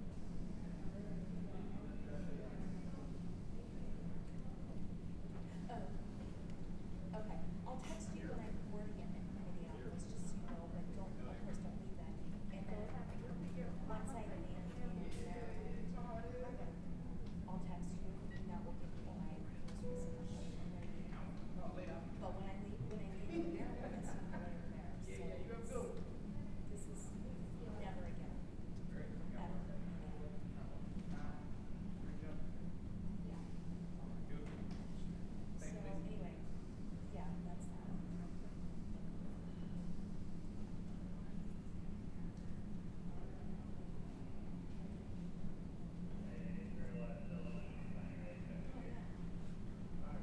The sounds of Gate H12 in Terminal 2 at the Minneapolis St Paul International Airport
Minnesota, United States, 17 May 2022